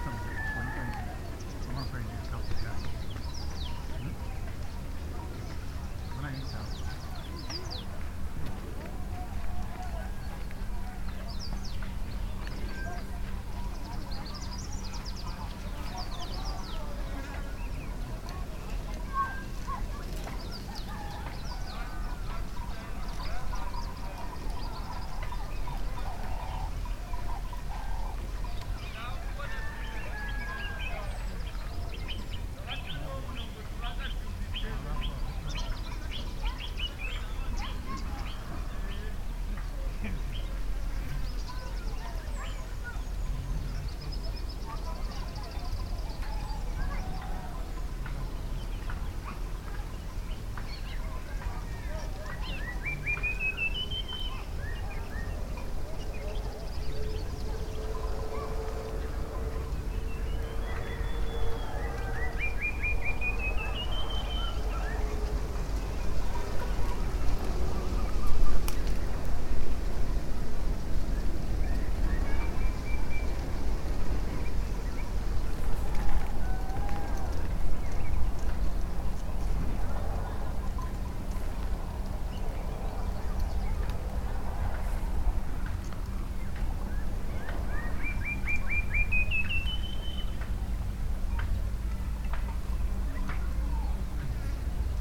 Harbour, Binga, Zimbabwe - sounds in Binga harbour...
...walking back up the way from the harbour, pausing a moment to listen to the many voices in the air… from the birds, the fishing camps a bit further up, the boats down at the lake...
October 2016